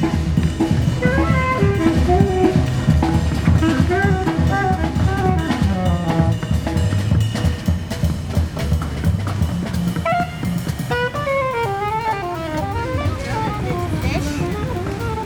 Voices and Entertainers in Central Park, New York, USA - Voices and Street Entertainers
Out of Loebs Boathouse Cafe then a brisk walk through street entertainers while catching glimpses of conversations. Mix Pre 3 + 2 Beyer lavaliers.
United States, 7 August 2018